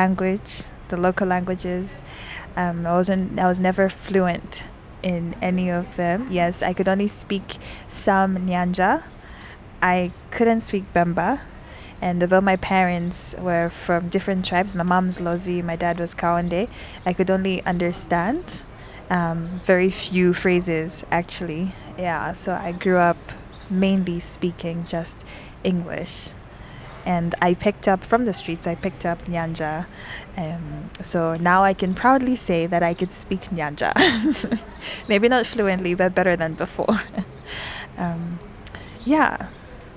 Arcades, Cnr Great East Road, Lusaka, Zambia - Grace Kubikisha tells her story as a Zambian woman
I met with Grace Kubikisha to interview her about participating in and contributing to the WikiWomenZambia project. here’s the very beginning of our conversation in which Grace pictures for us very eloquently aspects of life for women in urban Zambia... Grace herself is now partnering with her mum in business after studying and working abroad for quite a number of years…
the entire interview with Grace Kubikisha can be found here: